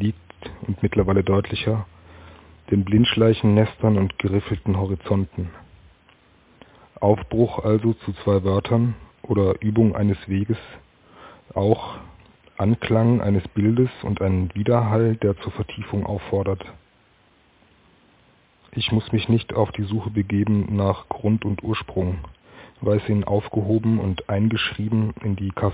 altenberg: altenberger dom
altenberger dom, Altenberg, Hzgt.